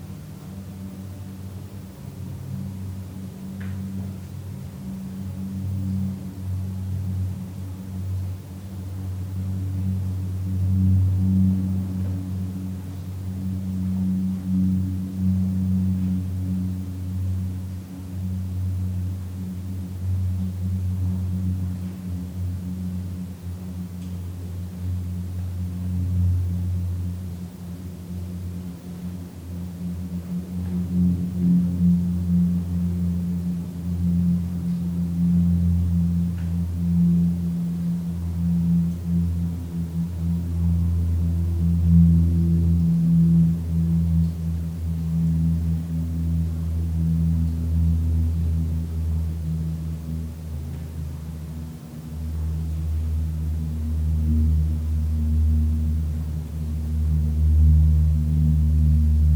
wülfrath, hammerstein, im zeittunnel
frühjahr 07 morgens - windresonanzen und schritte im "zeittunel" - hier ohne exponate
Spring 2007 in the early morning. Resonances affected by the wind and silent steps in the empty "zeittunnel" exhibition tunnel.
project: :resonanzen - neandereland soundmap nrw - sound in public spaces - in & outdoor nearfield recordings
24 June 2008, 22:49